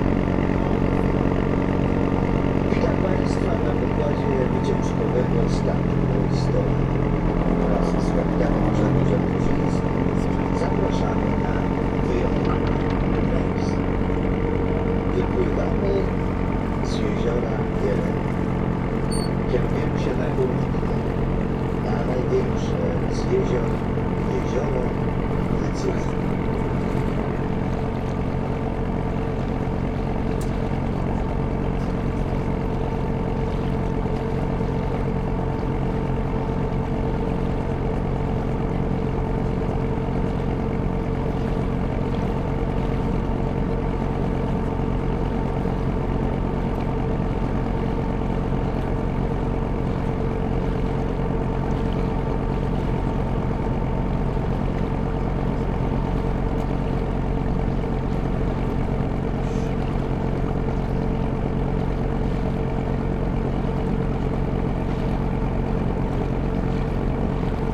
Jezioro Wdzydze - Rejs
Dźwięk nagrany podczas Rejsu w ramach projektu : "Dźwiękohistorie. Badania nad pamięcią dźwiękową Kaszubów".